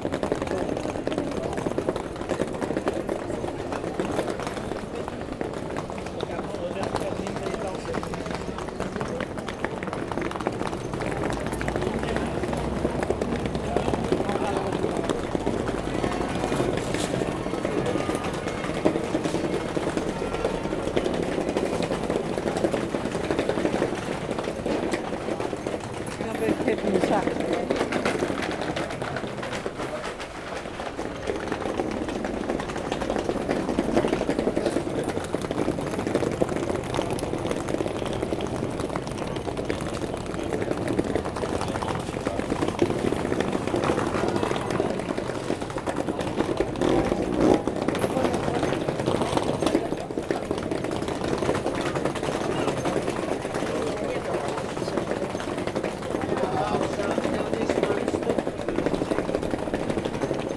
Many old streets of Leuven are made with cobblestones. Sound of bags on it, and after, a walk inside the market place.